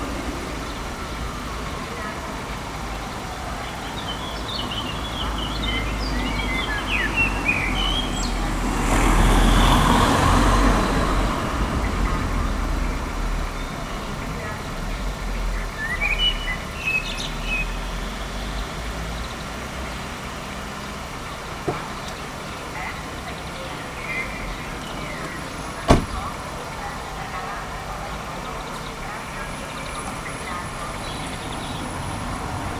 Dobšinského, Bratislava, Slovensko - Spring ambiance near the train station